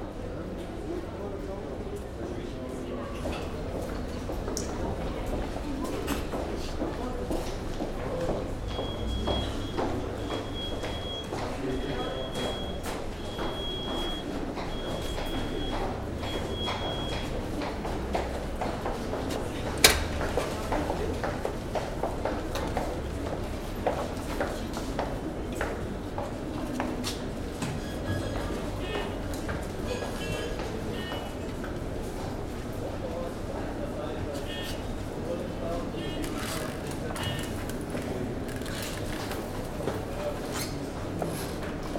Hôtel de Ville L. Pradel, Lyon, France - Métro lyonnais
Près du distributeur de ticket dans le métro . Arrivée départ des rames, bruits du distributeur pas des passants. Extrait CDR gravé en 2003.